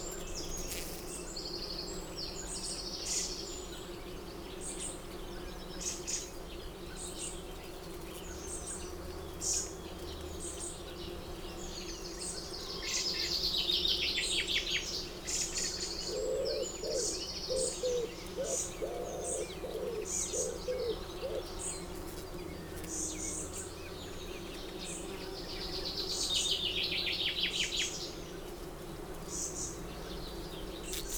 Lime tree buzzing ... bees ... wasps ... hoverflies ... etc ... visiting blossom on the tree ... open lavaliers on T bar on telescopic landing net handle ... bird song and calls from ... goldfinch ... chaffinch ... chiffchaff ... wood pigeon ... song thrush ... wren ... blackbird ... tree sparrow ... great tit ... linnet ... pheasant ... some background noise ...
Malton, UK, 8 July 2018